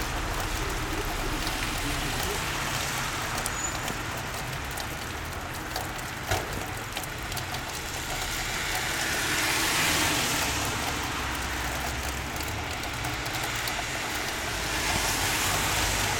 Sound of rain through outer pipes
So de la pluja a través de canonades exteriors
Sonido de la lluvia a través de cañerias exteriores
Stockholm, Sweden, 4 August, 17:00